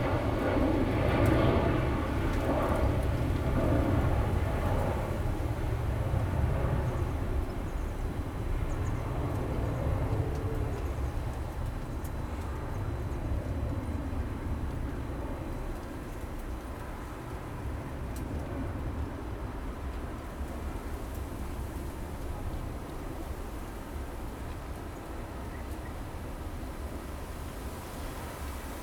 大園區後厝里, Taoyuan City - Next to the airport
Next to the airport, wind, take off
Zoom H2n MS+XY